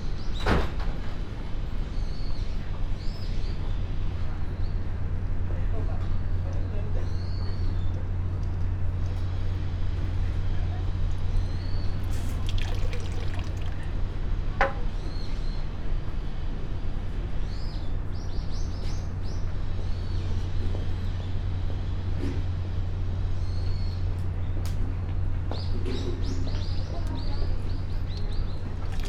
koishikawa korakuen gardens, tokyo - shishi-odoshi
bamboo tube, water flow, still water